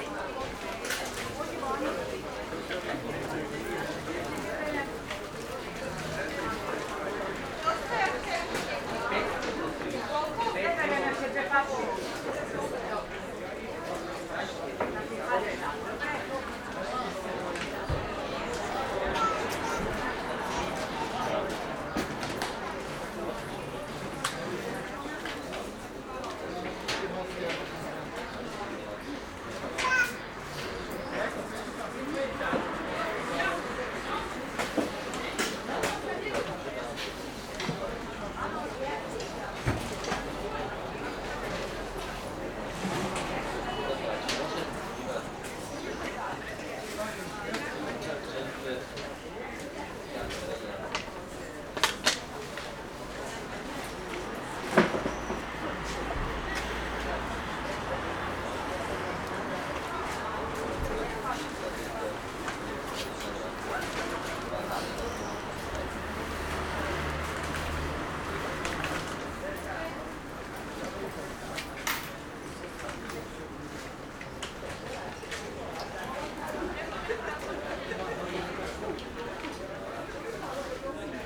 recorded with binaural microphones